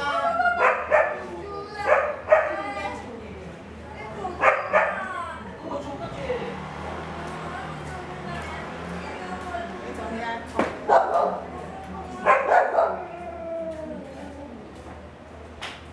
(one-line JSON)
{"title": "Aly., Ln., Sec., Zhongshan Rd., Zhonghe Dist. - Dogs barking", "date": "2012-02-14 17:13:00", "description": "Dogs barking, in the alley\nSony Hi-MD MZ-RH1 +Sony ECM-MS907", "latitude": "25.01", "longitude": "121.51", "altitude": "16", "timezone": "Asia/Taipei"}